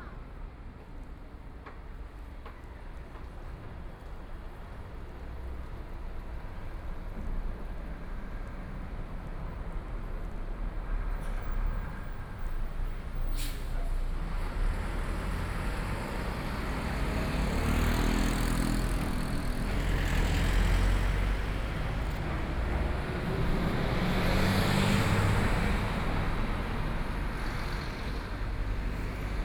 Guangming Rd., Luzhou - walking in the Street
Rainy days, walking in the Street, Binaural recordings, Zoom H6+ Soundman OKM II